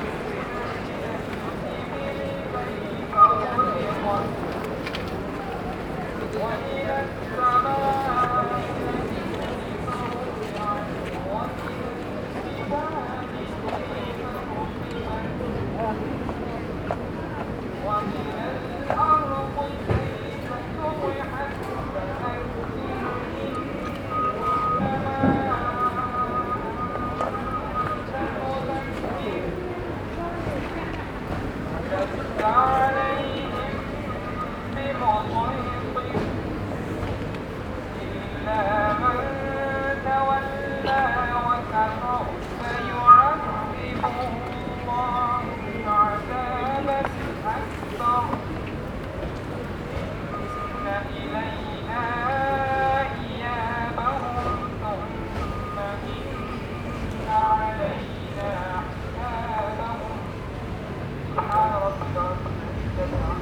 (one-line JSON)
{"title": "Brixton Market, London, UK - Memorial for a trader", "date": "2008-01-19 17:20:00", "description": "A memorial for a trader who was killed at his stall in Brixton market\nfrom: Seven City Soundscapes", "latitude": "51.46", "longitude": "-0.11", "altitude": "16", "timezone": "Europe/London"}